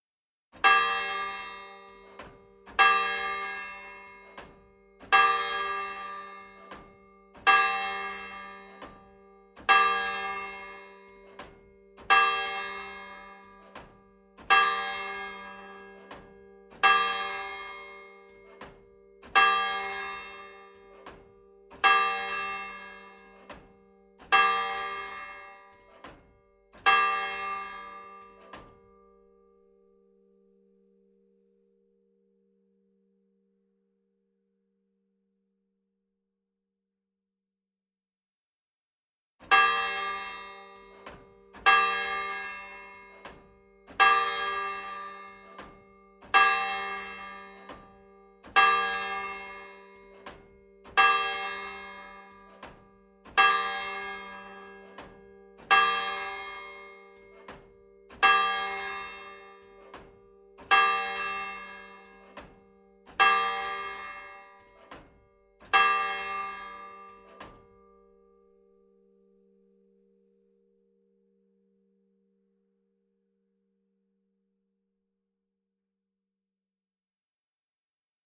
Le Bourg, La Hoguette, France - La Hoguette - Église Saint-Barthélemy
La Hoguette (Calvados)
Église Saint-Barthélemy
12H